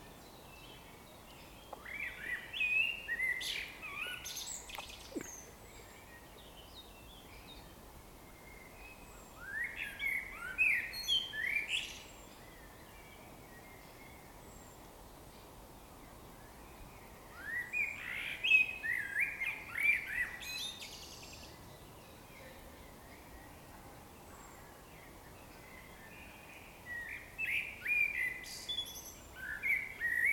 Beckerbruch Park, Georgenallee, Dessau-Roßlau, Germany - Birds chirping
Birds chirping on a lazy spring afternoon, at this natural getaway from the small city of Dessau. Recorded on Roland R-05.